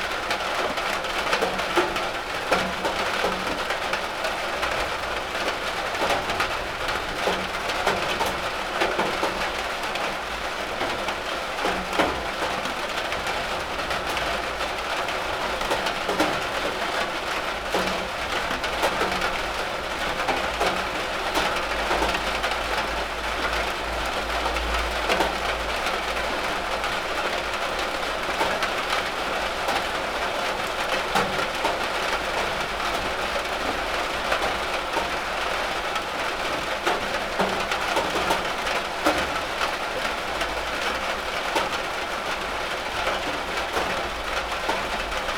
Piatkowo district, Marysienki alotments - garage in the rain
rain drops on a metal roofing
Poznań, Poland, 7 June